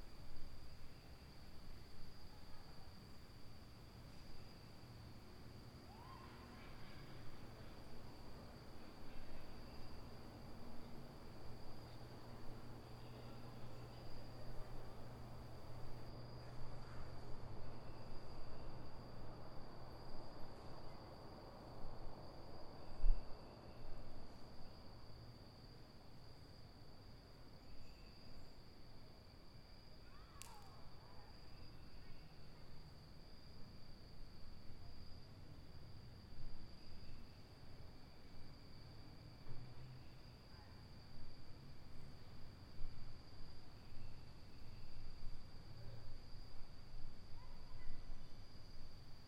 Cra., Medellín, Belén, Medellín, Antioquia, Colombia - Noche
Un parqueadero casi sin vehículos, podría ser porque los dueños de estos bienes se encuentran
horrorizados por el mugre y suciedad que causa la caída de material orgánico por parte del bosque
que se encuentra al lado izquierdo de la foto.